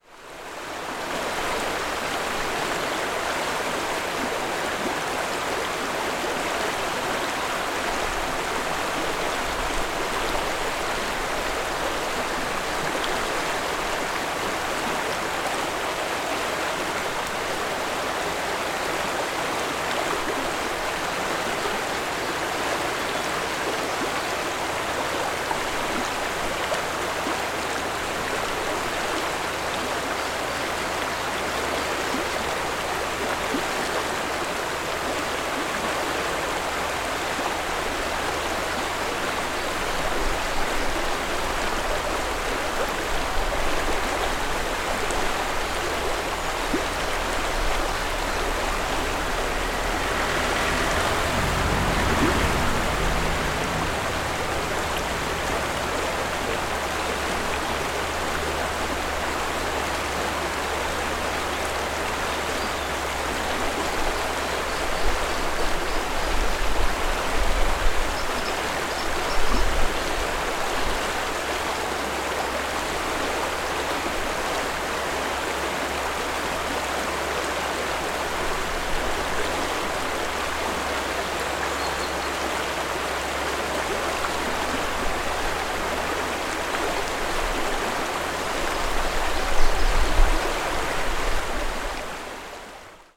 Cerezales del Condado, León, España - Puente del río Porma
El río Porma, desde el puente. Zoom H6, X/Y.